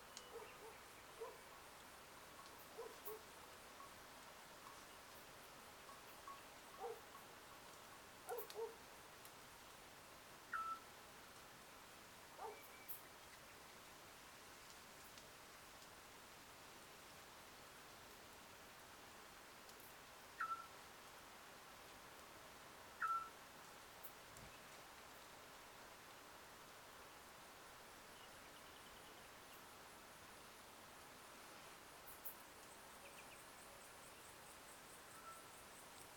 Spitaki Mikro Papingo - Birds celebrate the summer solstice along with a few friends
These sounds taken from an extended recording on the night of the summer solstice 2017 (20/21 June) from our garden in Mikro Papingo. This recording comprises two fragments: At about 2.30 am the Scops owl begins to wind down his mournful calljust as the first birds start their early morning song. Later on our drama queen donkey who grazes in the other village across the valley gives its first sad eeyore for the day; so echoing the scops I wanted to put them together. You can also hear distant goat bells, dogs and a mystery animal sneeze. Recorded using 2 Primo EM172 capsules made up by Ian Brady (WSRS) mounted in my homemade lightweight SASS (ref Vicki Powys et al) to an Olympus LS 11. No editing apart from selection, fade i/o and cross fade for donkey